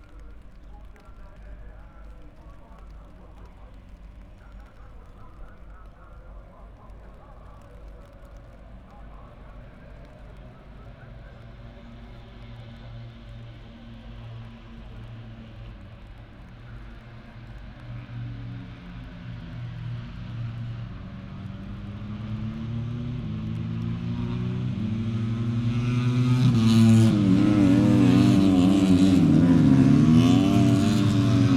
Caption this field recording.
moto three qualifying ... Vale ... Silverstone ... open lavalier mics clipped to wooden clothes pegs fastened to sandwich box on collapsible chair ... umbrella keeping the rain off ... it was very wet ... associated sounds ... rain on umbrella ... music coming from onsite disco ... weather was appalling so just went for it ...